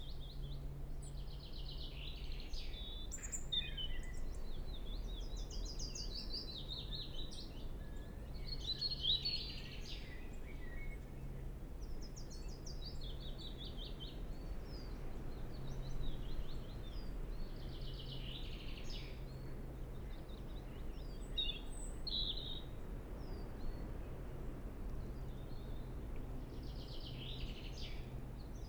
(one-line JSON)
{"title": "Glasson Moss, Cumbria, UK - Bird Trees", "date": "2013-04-24 15:00:00", "description": "Birds, wind in trees, aeroplanes. recorded at the nature reserve Glasson Moss, a peat bog with strange fauna and flora. ST350 mic. Binaural decode", "latitude": "54.94", "longitude": "-3.19", "altitude": "14", "timezone": "Europe/London"}